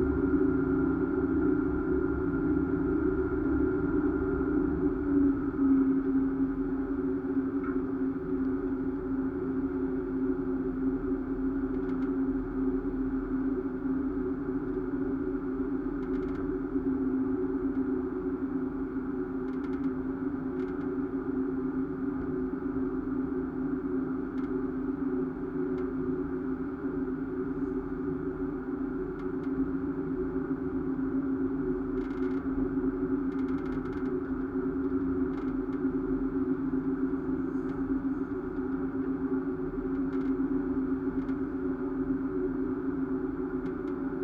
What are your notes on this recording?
crossing the lake constance by boat with a contact microphone on it...